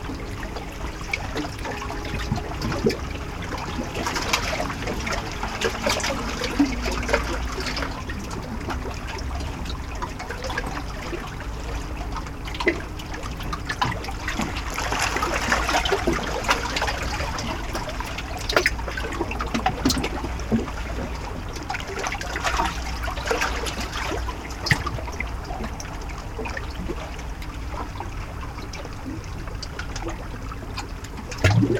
{"title": "Avenue Gustave Doret, Lausanne, Suisse - Le lac Léman la nuit, devant le Théâtre de Vidy à Lausanne", "date": "2021-01-06 23:30:00", "description": "Enregistrement binaural: à écouter au casque.\nBinaural recording: listen with headphones.", "latitude": "46.51", "longitude": "6.61", "altitude": "370", "timezone": "Europe/Zurich"}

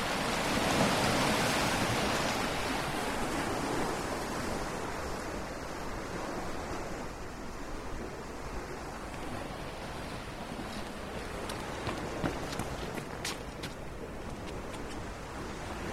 {"title": "Rimini, the last dicotheque on the mainland, out of the season", "date": "2011-04-05 18:46:00", "description": "Rimini is a summer city. Beaches, discos, ice cream. Before or after the season, there are seagulls, there are waves, there are fishermen.", "latitude": "44.08", "longitude": "12.58", "timezone": "Europe/Rome"}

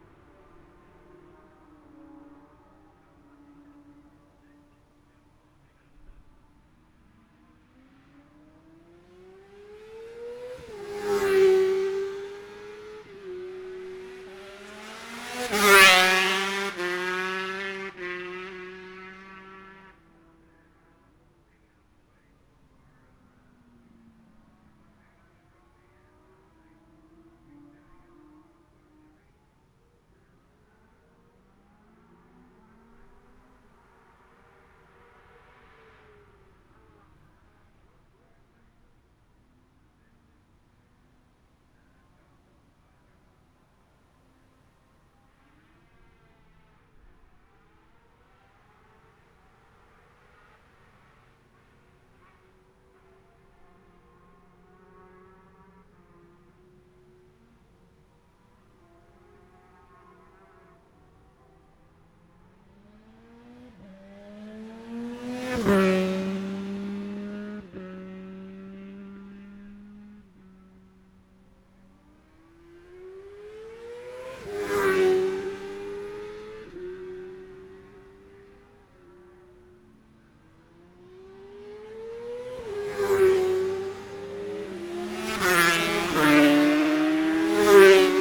{"title": "Jacksons Ln, Scarborough, UK - Gold Cup 2020 ...", "date": "2020-09-11 11:25:00", "description": "Gold Cup 2020 ... Twins and 2 & 4 strokes practices ... Memorial Out ... Olympus LS14 integral mics ...", "latitude": "54.27", "longitude": "-0.41", "altitude": "144", "timezone": "Europe/London"}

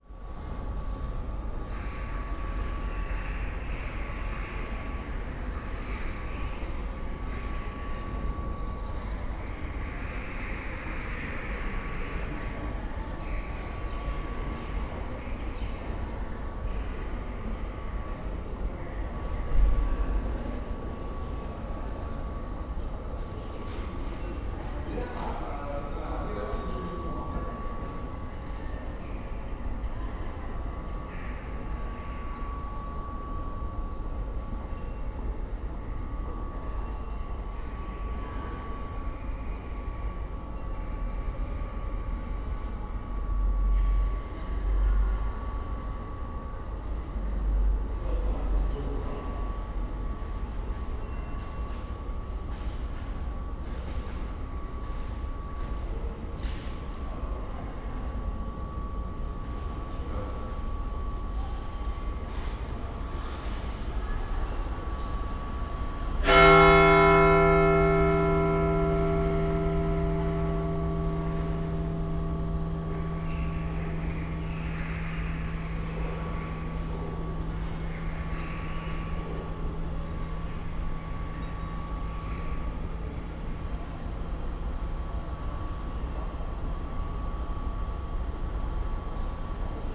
{"title": "Hôtel de Ville, Aix-en-Provence, Fr. - yard ambience", "date": "2014-01-08 18:25:00", "description": "Hôtel de Ville, inner yard ambience, a high pitched sound of unknown origin can be heard, and a churchbell stroke.\n(Sony PCM D50, Primo EM172 AB)", "latitude": "43.53", "longitude": "5.45", "altitude": "209", "timezone": "Europe/Paris"}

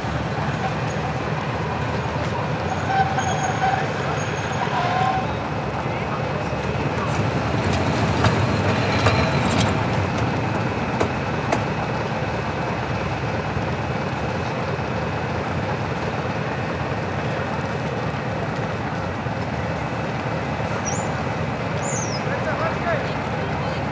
{"title": "Pristanishten kompleks, Varna, Bulgaria - Varna Train Station Sounds", "date": "2014-11-09 05:42:00", "description": "Recorded with a Zoom H6 in MS recording mode.", "latitude": "43.20", "longitude": "27.91", "altitude": "2", "timezone": "Europe/Sofia"}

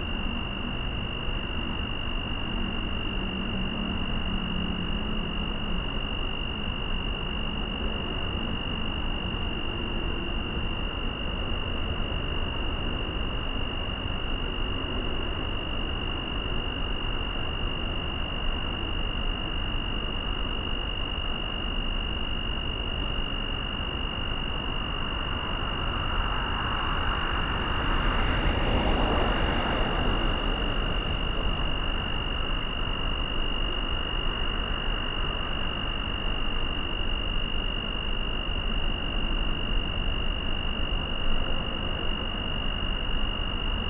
{"title": "Austin, N Bluff Dr, Insects", "date": "2011-11-12 23:21:00", "description": "USA, Texas, Austin, Road traffic, Insect, Night, Binaural", "latitude": "30.20", "longitude": "-97.77", "altitude": "192", "timezone": "America/Chicago"}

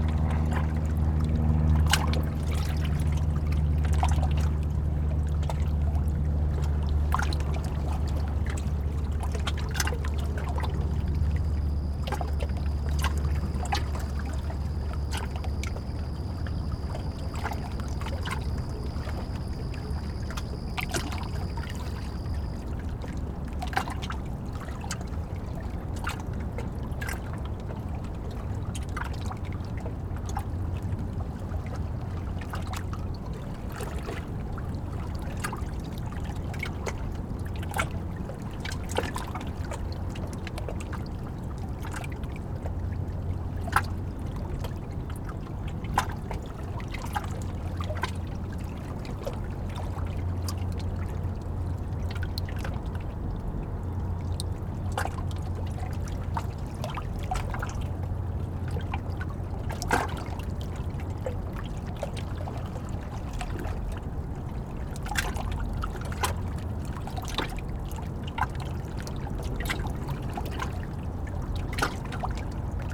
{"title": "Red Flynn Dr, Beacon, NY, USA - Hudson River at Pete And Toshi Seeger Riverfront Park", "date": "2017-10-05 15:20:00", "description": "Hudson River at Pete And Toshi Seeger Riverfront Park, Beacon, NY. Sounds of the Hudson River. Zoom H6", "latitude": "41.51", "longitude": "-73.99", "altitude": "2", "timezone": "America/New_York"}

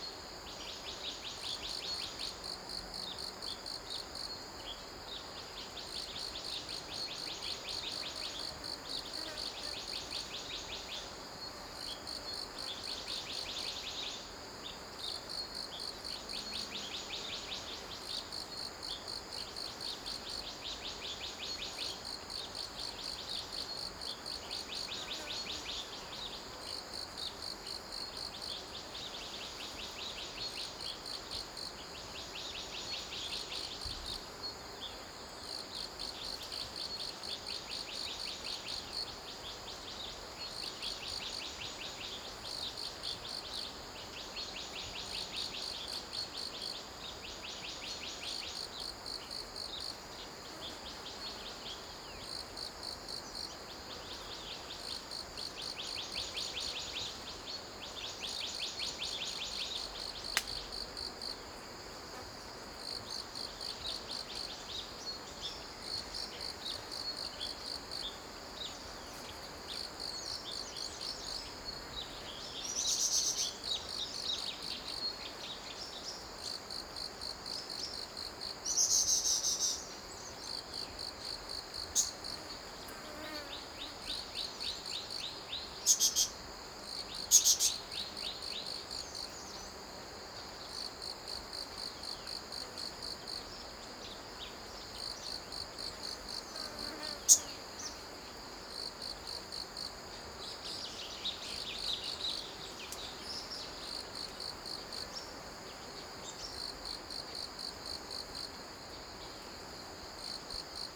{"title": "Tapaw Farm, 達仁鄉台東縣 - Early morning", "date": "2018-04-06 06:07:00", "description": "Early morning on the farm in the mountains, Bird cry, Insect noise, Stream sound\nZoom H6+ Rode NT4", "latitude": "22.45", "longitude": "120.85", "altitude": "253", "timezone": "Asia/Taipei"}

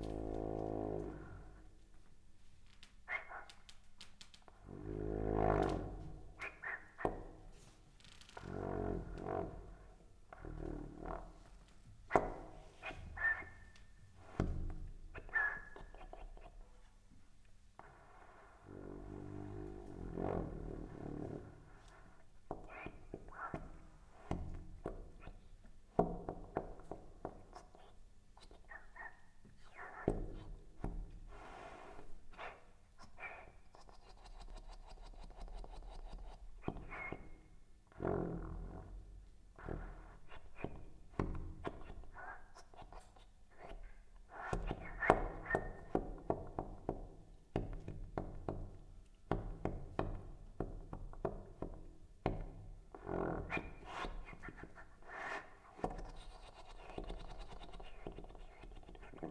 {"title": "private concert, nov 27, 2007 - Köln, private concert, nov 27, 2007", "description": "excerpt from a private concert. playing: dirk raulf, sax - thomas heberer, tp - matthias muche, trb", "latitude": "50.92", "longitude": "6.96", "altitude": "57", "timezone": "GMT+1"}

